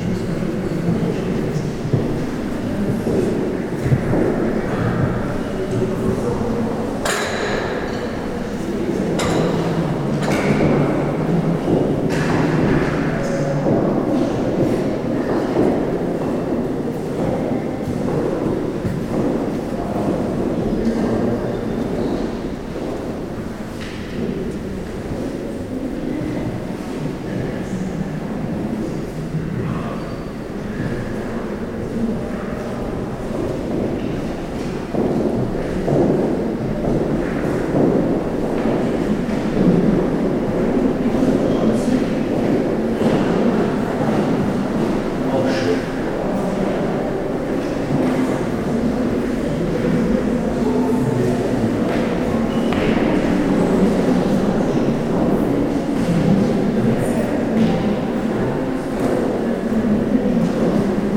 tondatei.de: villa stuck, ausstellung cornelius völker
kunst, atmo, austellungshalle, raum